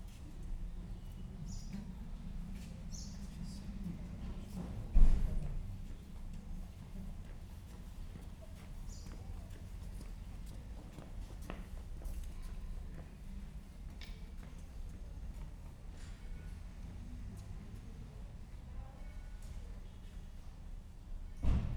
Triq It-Dejqa, Victoria, Malta - afternoon street ambience
Gozo island, Victoria, Triq It-Dejqa, afternoon ambience in a small street
(SD702 DPA4060)